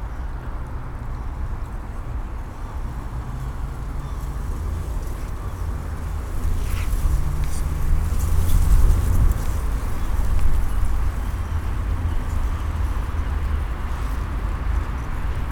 The Car Park Mere, Mere Ln, Scarborough, United Kingdom - The Mere ... daylight breaks ...
The Mere ... daylight breaks ... groups of canada and greylag geese take to the air ... bird calls and wing beats also from ... mute swan ... moorhen ... mallard ... grey heron ... black-headed gull ... blackbird ... magpie ... crow ... mandarin duck ... wren ... redwing ... chaffinch ... dunnock ... wood pigeon ... domesticated goose ... lavaliers clipped to sandwich box ... plenty of noise from the morning commute ...
13 December 2018, 07:40